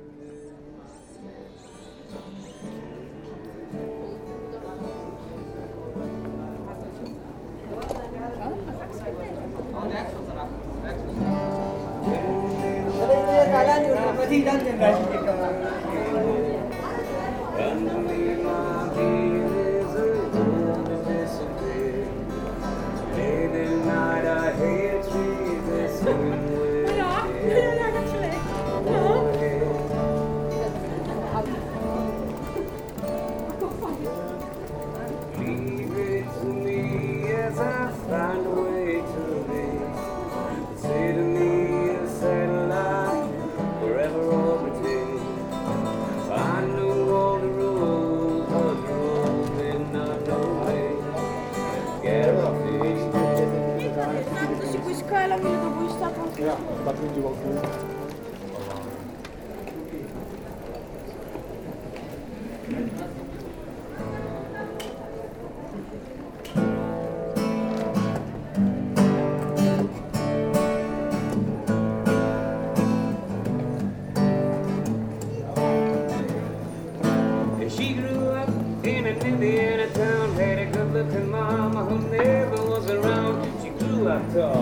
Gent, België - Street guitarist
A guitarist plays the precious song from Eddie Vedder called Guaranteed (Into The Wild).